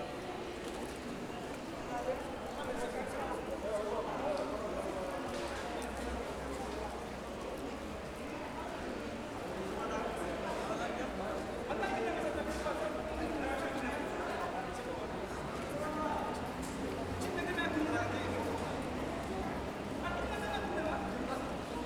Basilique de Saint-Denis, Saint-Denis, France - Metro Saint-Denis Basilique & Carrefour
This recording is one of a series of recording mapping the changing soundscape of Saint-Denis (Recorded with the internal microphones of a Tascam DR-40).